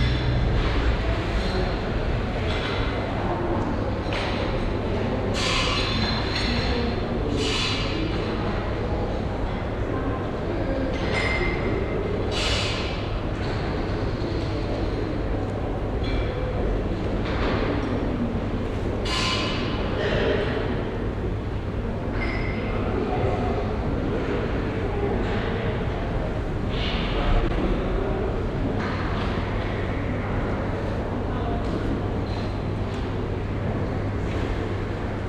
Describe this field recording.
At a semicircular staircase inside the Landtag building. The sounds of the lunch preparations from the downhall cafeteria. Some steps up and down the stairs. A group of children. This recording is part of the exhibition project - sonic states, soundmap nrw - sonic states, social ambiences, art places and topographic field recordings